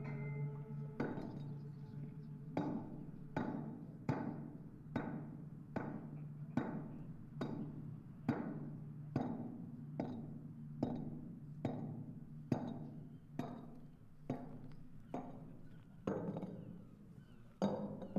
ivon oates - Portland Stone: sound installation Fishermans Row Portland Dorset UK
Sound installation commissioned work for b-side Weymouth and Portland Dorset UK